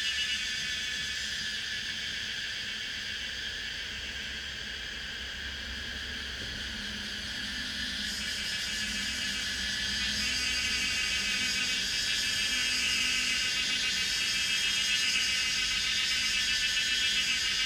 Taomi Ln., 桃米里 Puli Township - Cicadas cry
Cicadas cry, Goose calls, Traffic Sound
Zoom H2n MS+XY
Nantou County, Puli Township, 桃米巷52-12號, 16 May 2016, 16:08